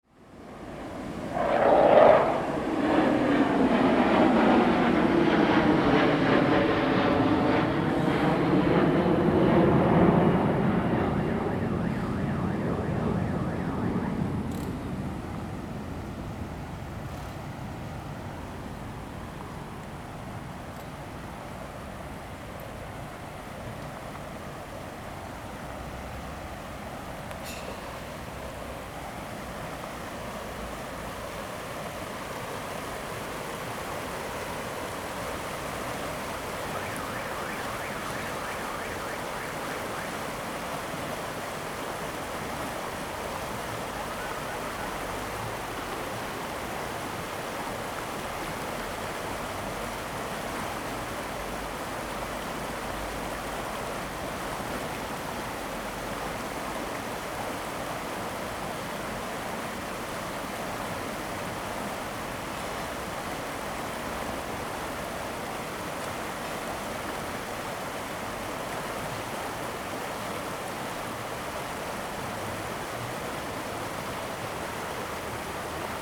吉安溪, Ji'an Township - Streams of sound
Streams of sound, Traffic Sound, Combat aircraft flying through, Very hot weather
Zoom H2n MS +XY